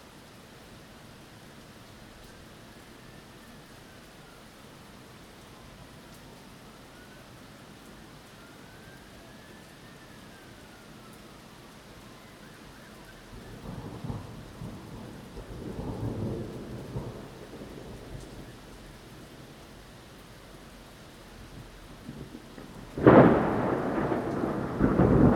Parmiter street, London borough of Tower Hamlets, London - Thunder and rain
Thunder in London, recorded with Zoom H1 recorder.
Greater London, UK